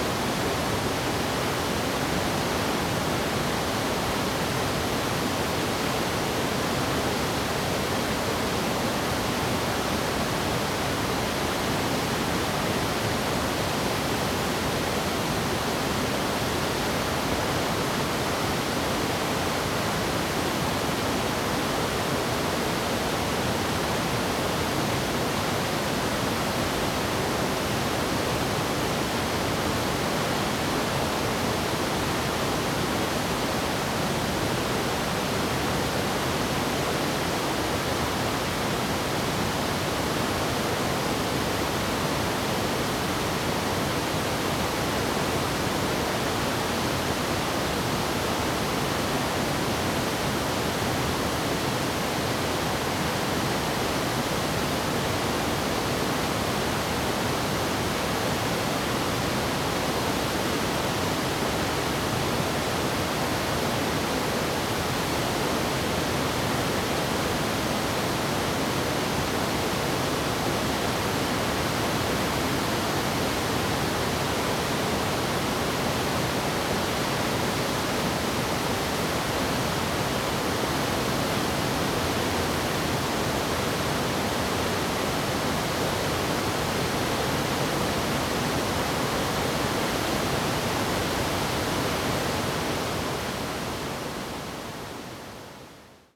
Nanshi River, 烏來區烏來里 - Sound of waterfall
Facing the waterfall, Traffic sound, Birds call
Zoom H2n MS+ XY
New Taipei City, Taiwan, 5 December 2016, ~09:00